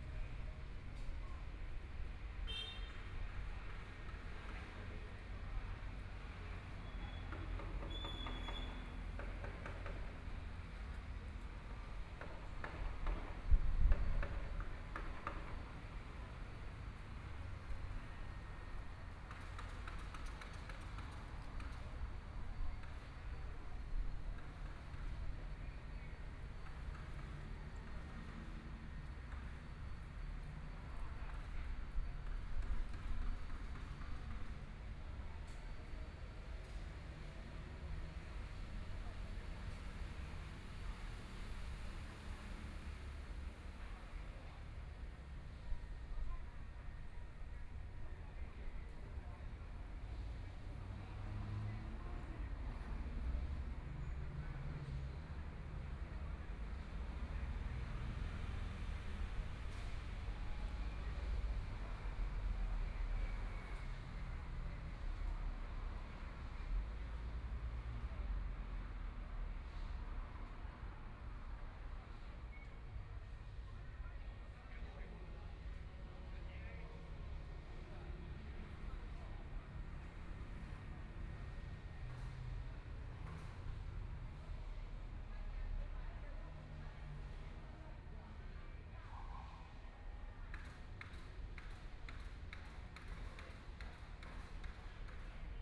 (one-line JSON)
{"title": "扶輪公園, Hualien City - in the Park", "date": "2014-02-24 11:31:00", "description": "in the Park, Traffic Sound, Environmental sounds, Construction Sound\nPlease turn up the volume\nBinaural recordings, Zoom H4n+ Soundman OKM II", "latitude": "23.99", "longitude": "121.60", "timezone": "Asia/Taipei"}